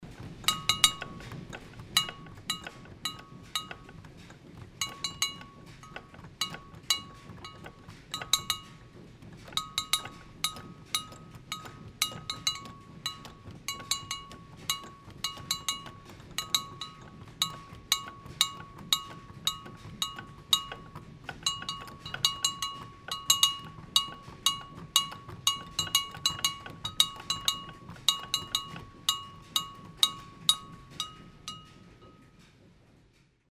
enscherange, rackesmillen, plansichter - enscherange, rackesmillen, mill stone
On the first floor of the historical mill. The sound of the signal bell at the mill stone.
Im ersten Stock der historischen Mühle. Der Klang der Signalglocke am Mühlstein.
Project - Klangraum Our - topographic field recordings, sound objects and social ambiences